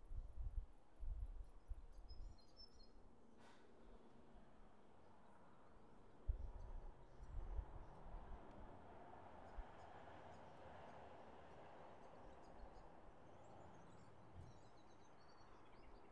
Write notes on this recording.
Birds singing and cars passing in a secluded area behind some trees, near the beach. Zoom H4n